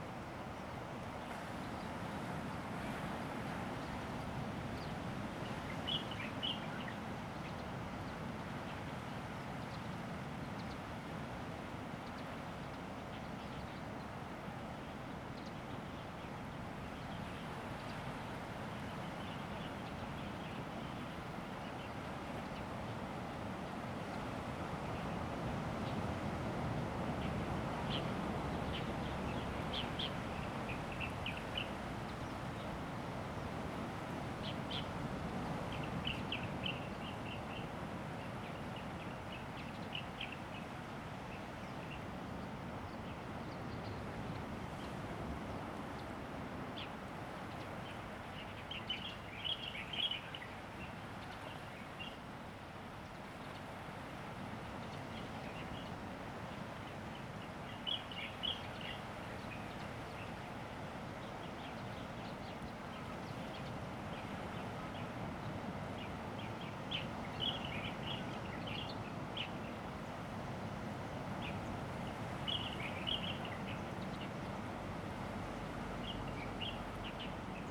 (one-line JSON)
{"title": "富山村, Beinan Township - Birds and the waves", "date": "2014-09-08 07:55:00", "description": "Birds singing, Sound of the waves\nZoom H2n MS +XY", "latitude": "22.84", "longitude": "121.19", "altitude": "6", "timezone": "Asia/Taipei"}